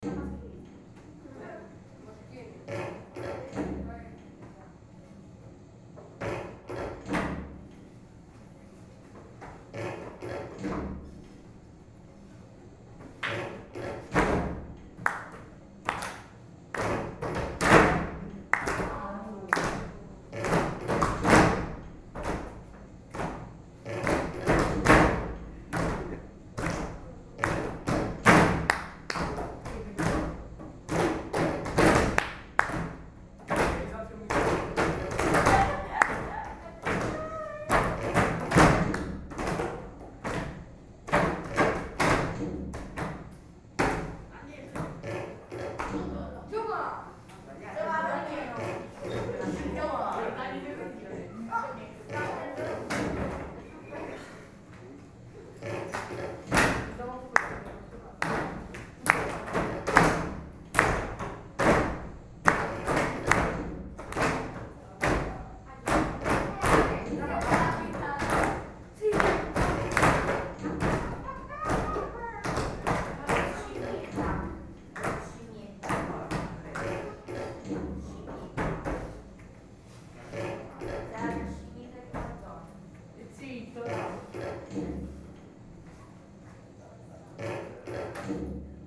Via S. Serafino da Montegranaro, Ascoli Piceno AP, Italia - Indiana Tones -CECI 3A
Soundscape Project, Indiana Tones, Rhythm by short clips from field recordings. Edirol R-09HR